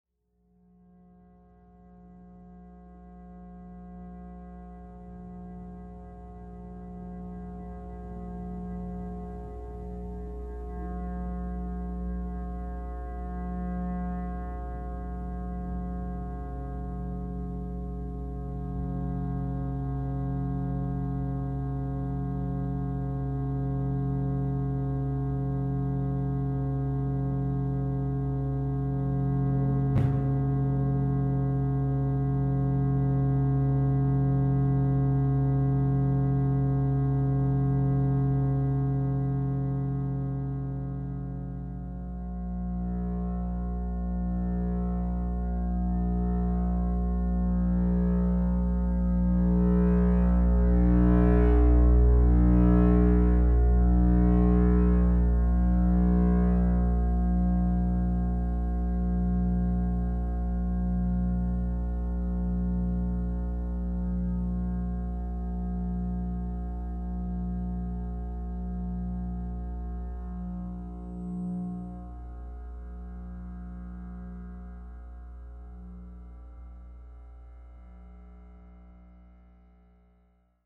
Montreal: Future Shop 460 Sainte-Catherine Ouest - Future Shop 460 Sainte-Catherine Ouest
equipment used: AIWA Digital MD recorder, EM field sensor headphones designed by Christina Kubisch
Recorded in HD TV section of the store. The headphones used convert EM waves into audible tones.